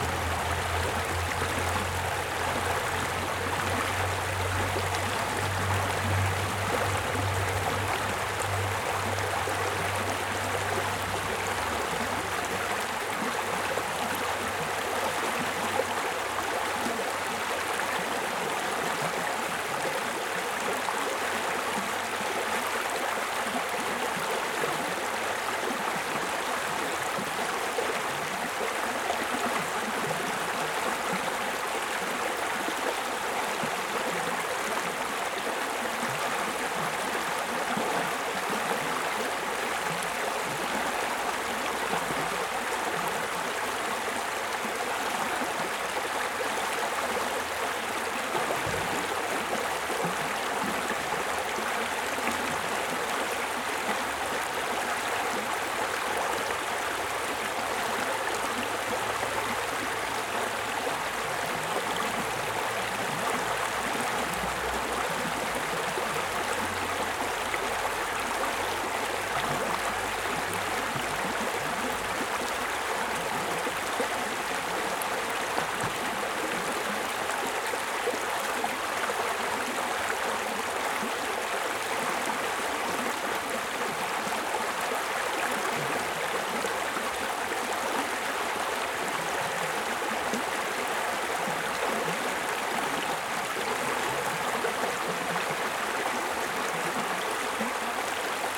Sitting next to the river for a few minutes to listen to the stream and watch the bats.
Holmfirth, West Yorkshire, UK, 18 July 2015, 23:56